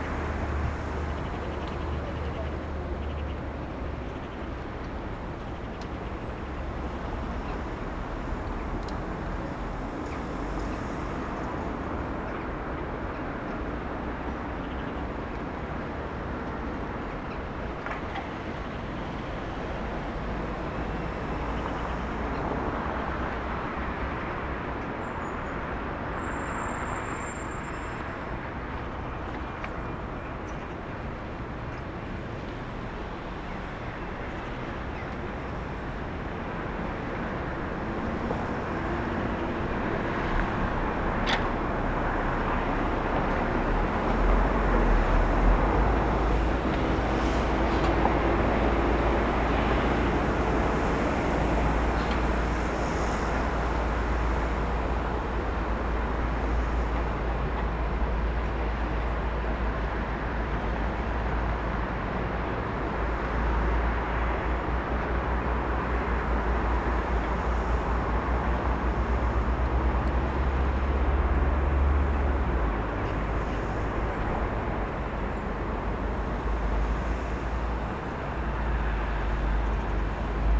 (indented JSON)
{
  "title": "Żołnierska, Olsztyn, Poland - Obserwatorium - Wschód",
  "date": "2014-06-03 16:53:00",
  "description": "Recorded during audio art workshops \"Ucho Miasto\" (\"Ear City\"):",
  "latitude": "53.77",
  "longitude": "20.49",
  "altitude": "141",
  "timezone": "Europe/Warsaw"
}